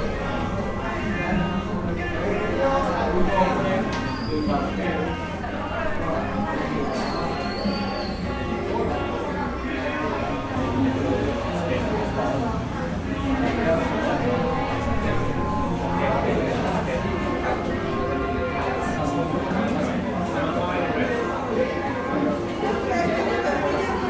Descripción: Cubículos de la Facultad de Comunicación de la Universidad de Medellín.
Sonido tónico: gente hablando, risas y música.
Señal sonora: bolsos chocando con sillas, teclado de computador, pitos de vehículos, tono de notificaciones de un celular y vehículos transitando.
Técnica: grabación con Zoom H6 y micrófono XY.
Alejandra Flórez, Alejandra Giraldo, Mariantonia Mejía, Miguel Cartagena, Santiago Madera.
24 September 2021, ~13:00